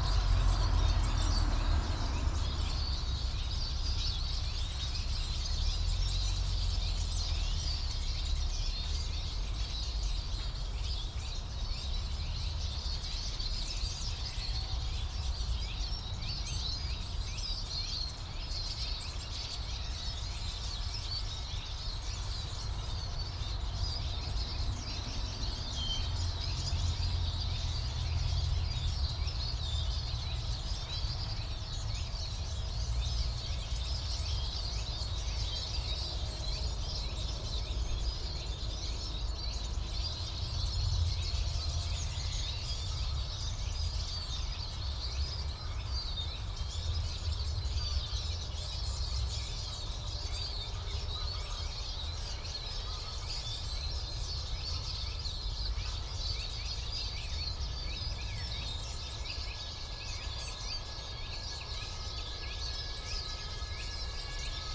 Am Sandhaus, Berlin-Buch, Deutschland - flock of birds
a flock of birds, most probably Eurasian siskin (Erlenzeisig, Spinus spinus), distant traffic noise from the nearby Autobahn
(Sony PCM D50, DPA4060)
Berlin, Germany, 30 March 2019